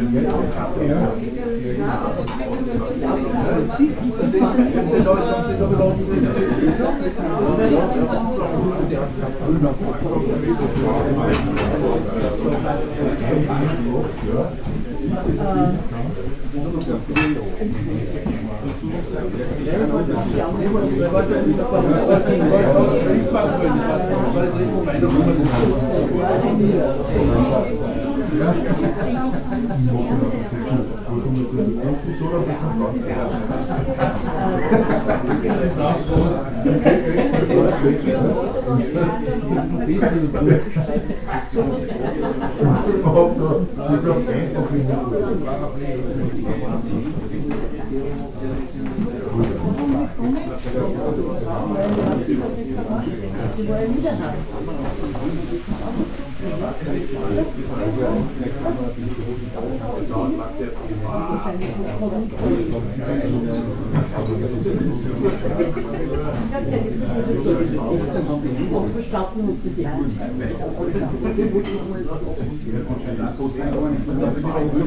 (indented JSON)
{
  "title": "Linz, Österreich - leopoldistüberl",
  "date": "2015-02-02 13:06:00",
  "description": "leopoldistüberl, adlergasse 6, 4020 linz",
  "latitude": "48.31",
  "longitude": "14.29",
  "altitude": "268",
  "timezone": "Europe/Vienna"
}